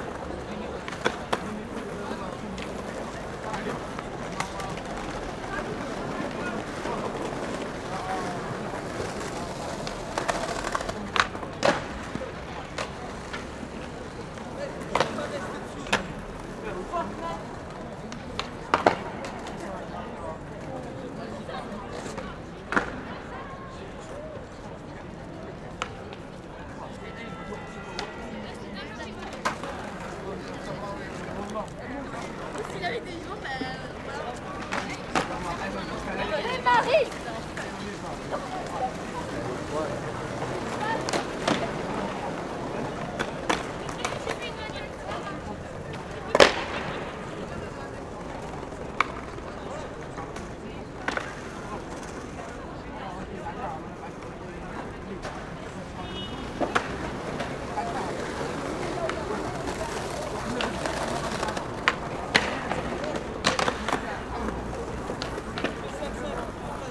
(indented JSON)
{
  "title": "Toulouse, France - skateboarding is not a crime",
  "date": "2022-01-29 14:26:00",
  "description": "Skateboarding\ncaptation ; ZOOMH6",
  "latitude": "43.60",
  "longitude": "1.44",
  "altitude": "157",
  "timezone": "Europe/Paris"
}